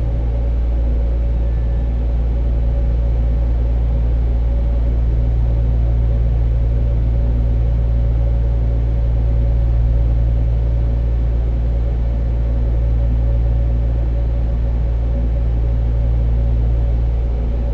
Świnoujście, Polska - Ferry Tales
Zoom F6, Superlux S502, Rode NTG4. Karsibor 4 Ferry on the go. Karsibór ferry is one of my very first and intimate noise experiences. Since next summer it will be shut down. So I decided to record it as extensibely as much as posiible and prepare VR sound experience. This is one of first day (night) recordings. Hot and calm august nigth, no people, empty ferry.
Just before the Ferry stops running (someday in 06.2023) I would like to arrange a festival of listening to it. Stay tuned.